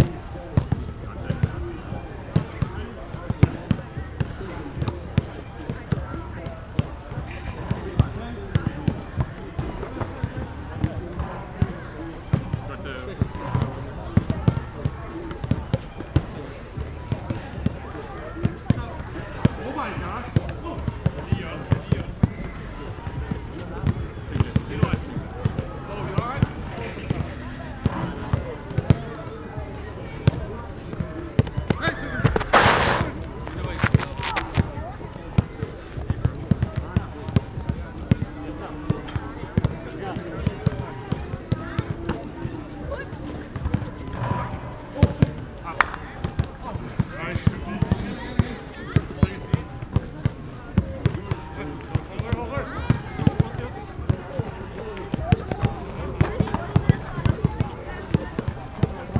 Serridslevvej, København, Danmark - sound of playing basketball.
Sound of playing basketball. Intens bumping of ball like big raindrops. recorded with Zoom h6. Øivind Weingaarde.
Region Hovedstaden, Danmark, 14 July 2022